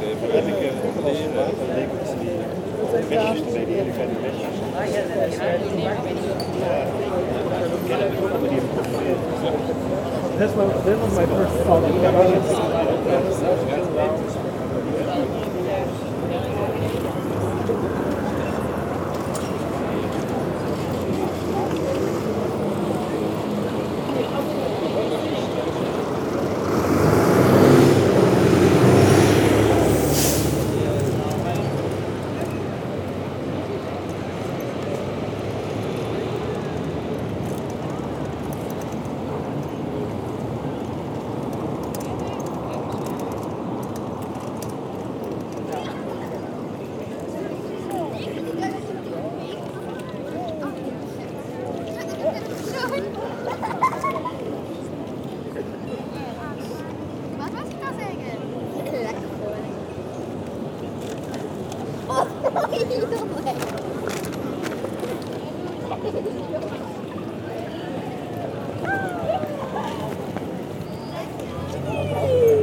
Maastricht, Pays-Bas - Main square of Maastricht
On the main square of Maastricht, people drinking coffee, tea and beer on the bar terraces.